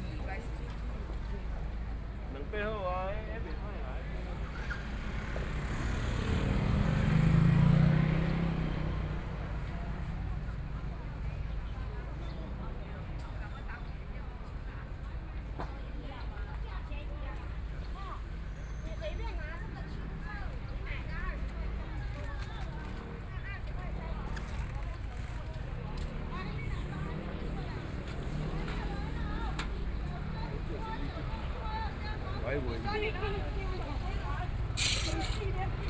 中山區行仁里, Taipei City - walking in the market
walking in the market, Traffic Sound, Walking south direction
Binaural recordings